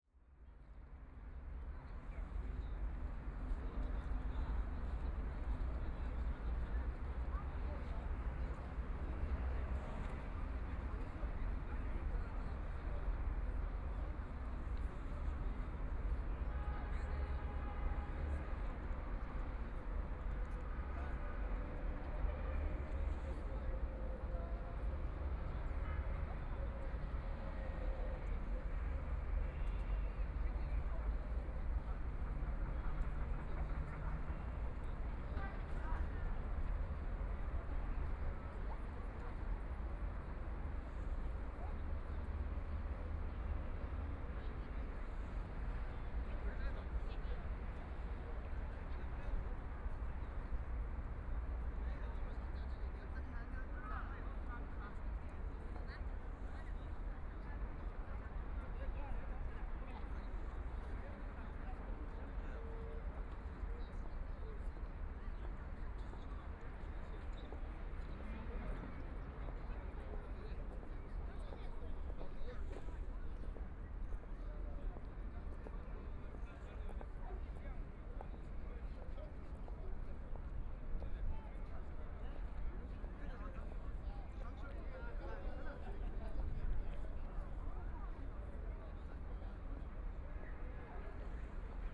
Many tourists in the square, Traffic Sound, Street with moving pedestrians, Ships traveling through, Binaural recording, Zoom H6+ Soundman OKM II
25 November 2013, 13:50